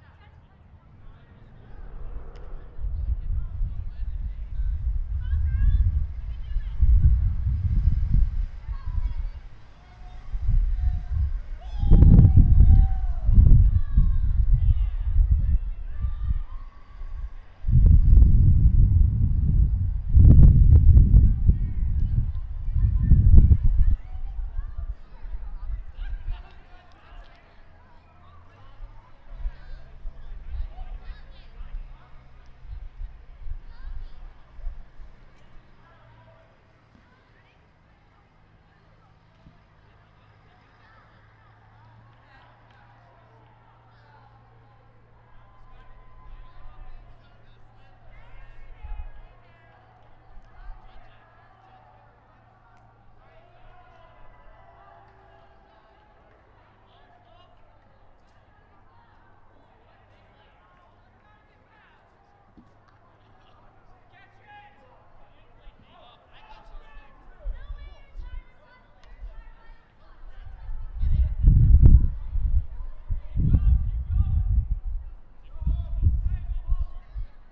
Outside South Hall North Entrance. Set on top of the short wall dividing the bike storage from the pathway. Facing West towards South Hall Quad. Sunny and warm out.
Placed about 4ft off the ground on a small tripod. No dead cat used.